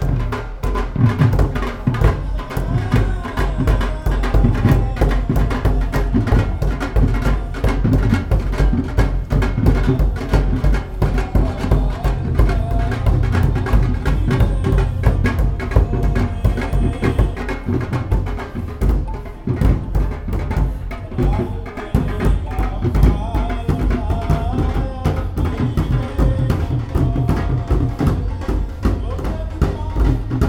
Reading, UK - Nagar Kirtan
This is the sound of the Nagar Kirtan celebrations. In Punjabi this means "town hymn singing" and this celebration is one of the ways in which the Sikh community mark the start of their new year.